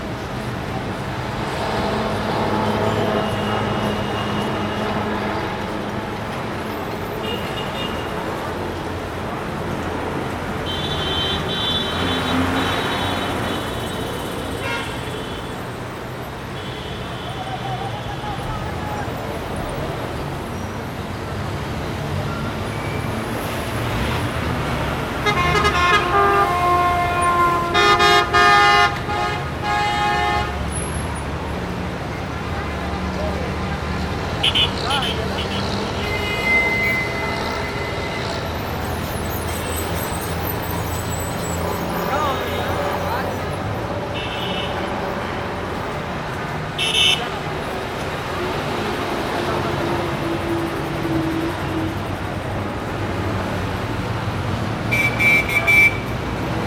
New Howrah Bridge Approach Rd, Gulmohar Railway Quarters, Mali Panchghara, Howrah, West Bengal, Inde - Howra Bridge - Ambiance
Howrah Bridge
Ambiance sur le pont